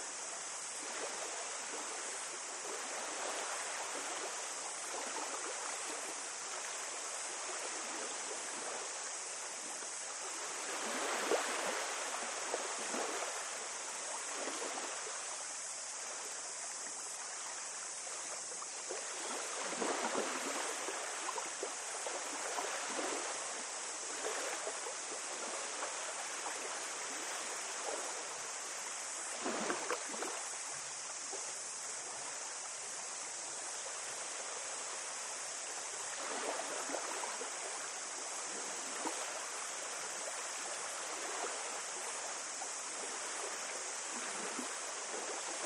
Hibiscus Coast, New Zealand - Buckleton Beach